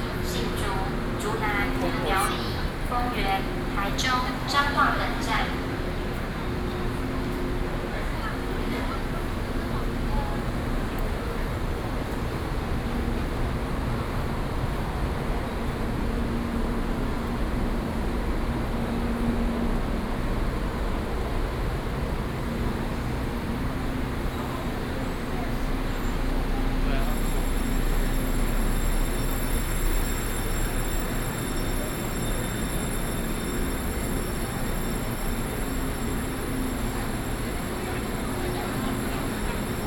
Taipei, Taiwan - Taipei Main Station
Train broadcasting, walking in the Railway platforms, Sony PCM D50 + Soundman OKM II
中正區 (Zhongzheng), 台北市 (Taipei City), 中華民國, 29 June